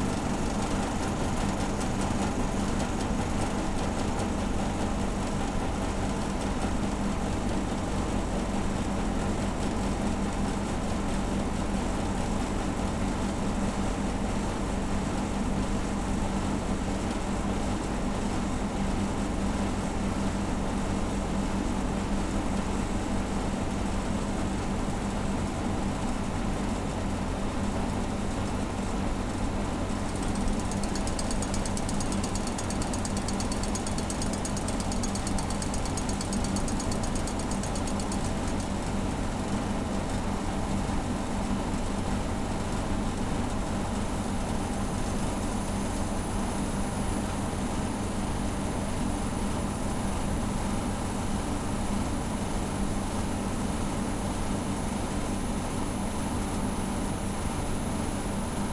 Binckhorst, Laak, The Netherlands - Airco fan mechanical noises
Binckhorst.
Sound of an airco fan goes through changes. Please notice the sudden mechanical "klang" noise at 0:56 which sounds like the rattling near the end of the file.
Recorded with 2 DPA 4060's and an Edirol R-44